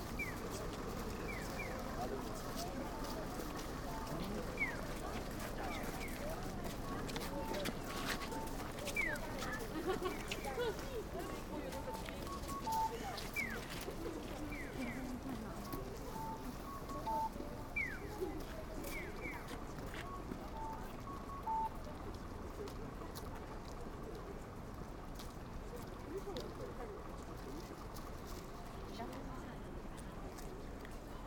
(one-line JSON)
{"title": "Kita 3 Jonishi, Chuo Ward, Sapporo, Hokkaido Prefecture, Japan - Pedestrian warning sounds when crossing the road", "date": "2017-02-06 19:30:00", "latitude": "43.07", "longitude": "141.35", "altitude": "25", "timezone": "GMT+1"}